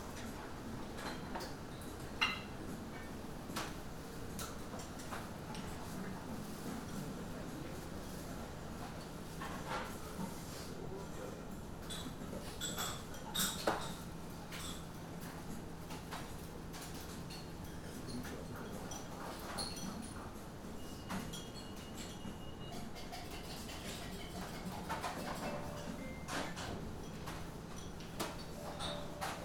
one of my favorite places sound-wise from my trip to Japan. Waiting for my dinner at Ootoya restaurant. Jazz music (played in many restaurants in Japan, even the really cheap ones, from what i have noticed), rattle from the kitchen, hushed conversations, waitresses talking to customers and serving food, customers walking in and out, a man eating his food loudly - slurping and grunting.